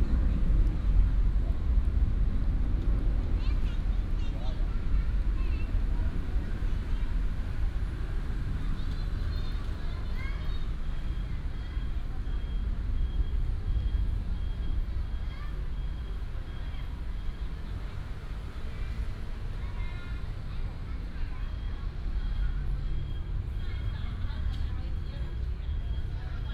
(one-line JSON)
{"title": "迪化公園, Datong Dist., Taipei City - in the Park", "date": "2017-04-10 18:01:00", "description": "in the Park, Child, The plane flew through, Traffic sound", "latitude": "25.07", "longitude": "121.51", "altitude": "8", "timezone": "Asia/Taipei"}